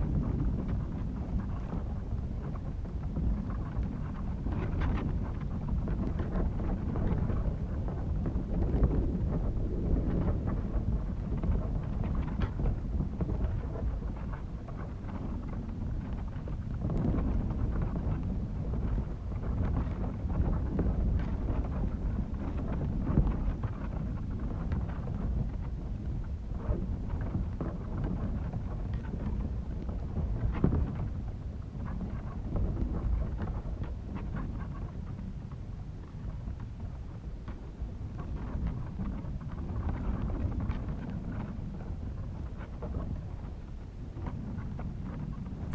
Maasvlakte, Maasvlakte Rotterdam, Niederlande - the first grass at the artificial beach
two akg 411p contact microphones in the grass on the dune.